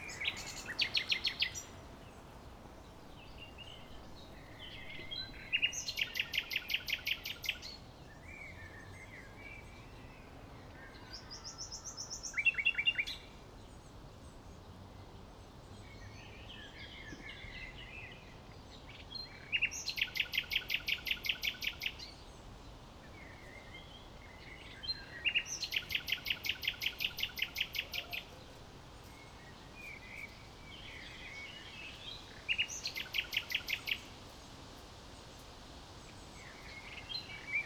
Heidekampweg, Berlin - Nightingale in bush nearby
Berlin, Mauerweg (former Berlin Wall area), a nightingale is singing in the bush two meters away, very umimpressed by pedestrians and cyclists
(Sony PCM D50)